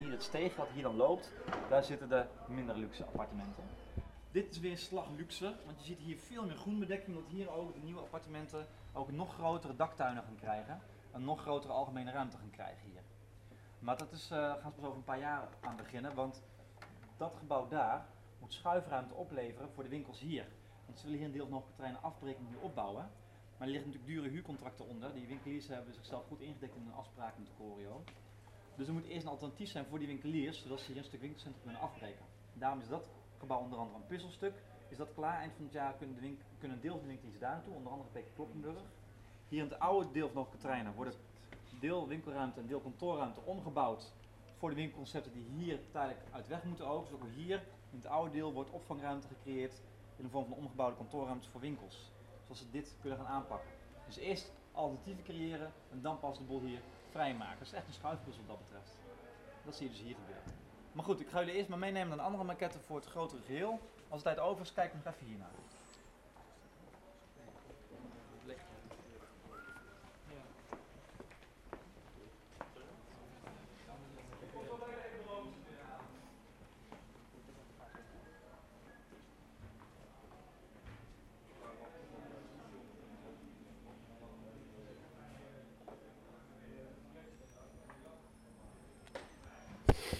Wijk C, Utrecht, Niederlande - future plans
someone explaining the plans for the development of the inner city of utrecht to students in an information center
Utrecht, The Netherlands, 7 May